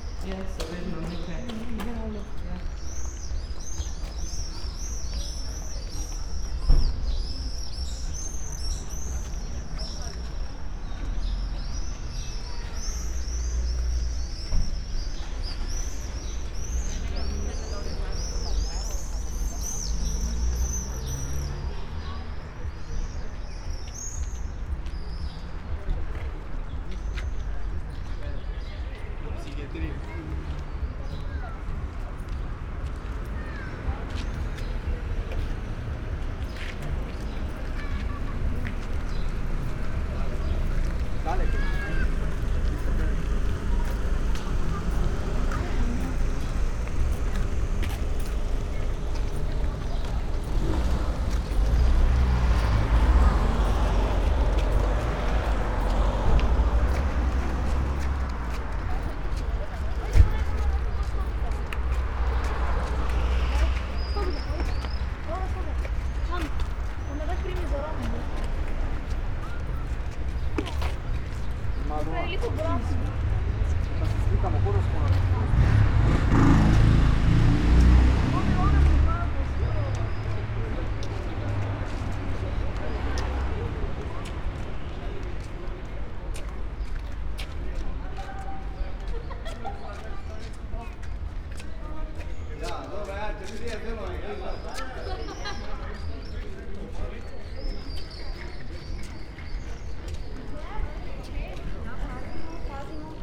ulica heroja Tomšiča, maribor, slovenija - swifts, walkers
summer evening, swifts, walkers, steps, spoken words ....
Maribor, Slovenia